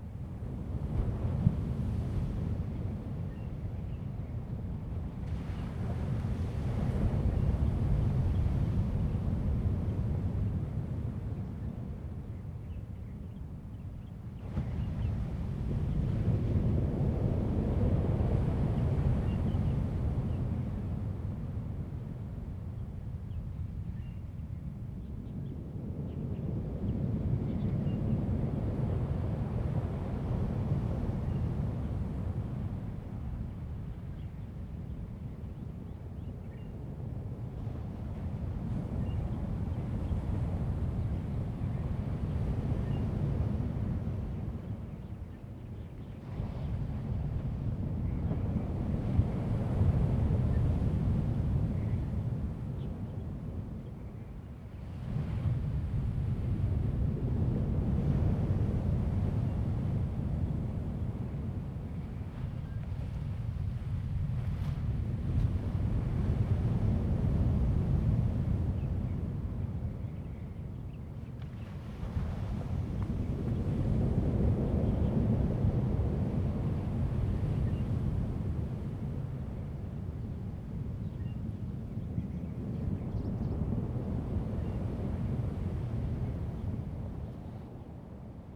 At the beach, Sound of the waves, Hiding behind the stone area, Birds
Zoom H2n MS+XY